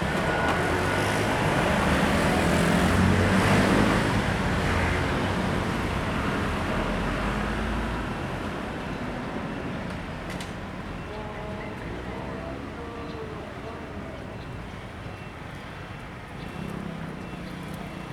Traffic Noise, Sony ECM-MS907, Sony Hi-MD MZ-RH1 (SoundMap20120329- 26)